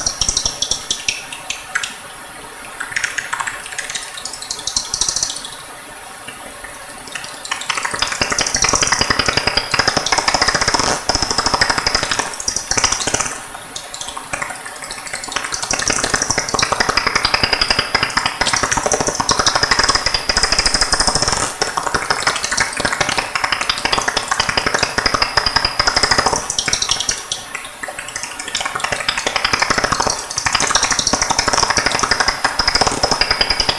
Castlecomer Discovery Centre, Kilkenny, Ireland
Bats feasting on river midgets, Soprano Pipstrelles, Daubentons Bats etc...
Co. Kilkenny, Ireland, 15 July, ~23:00